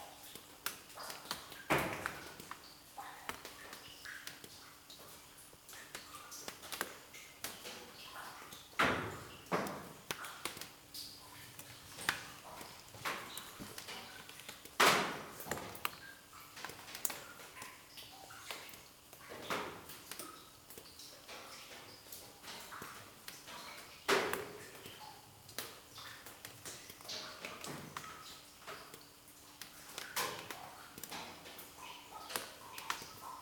Audun-le-Tiche, France - The pit
At the bottom of the 90 meters mining pit. Some small drops are falling.